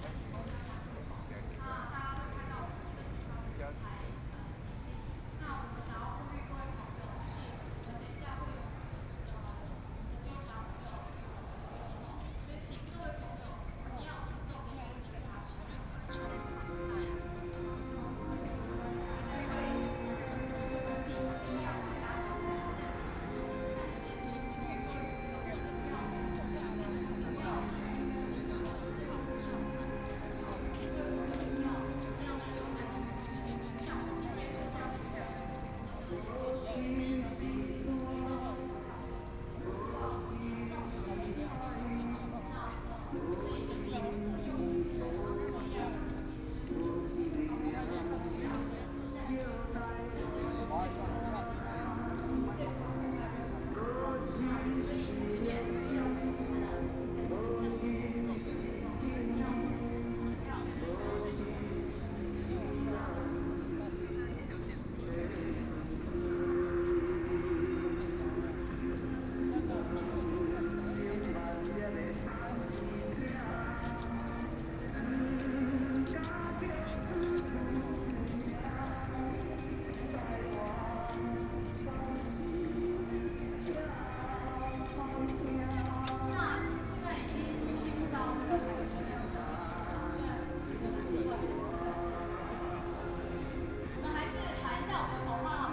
National Taiwan Museum, Taipei City - Protest
Opposition to nuclear power, Protest
Sony PCM D50+ Soundman OKM II
27 April